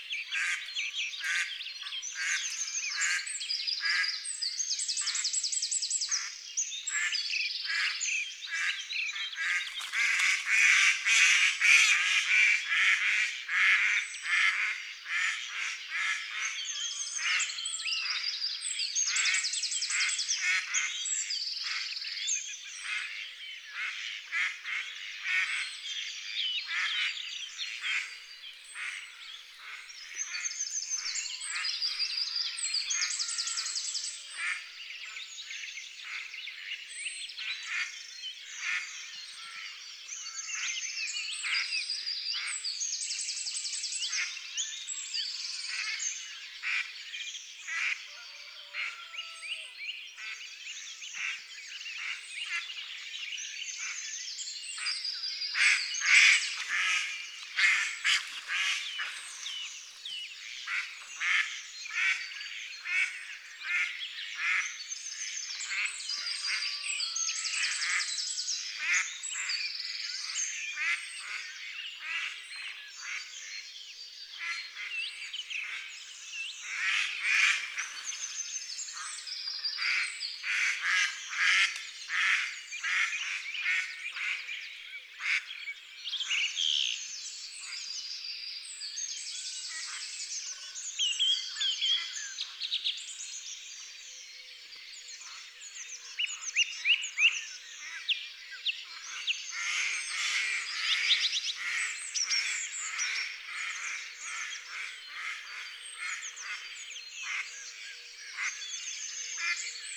Unnamed Road, Colomieu, France - 19990502 0421 lac-arboriaz 00-21-47.055 00-45-12.666
19990502_0421_lac-arboriaz
tascam DAP1 (DAT), Micro Tellinga, logiciel samplitude 5.1
2 May 1999, ~04:00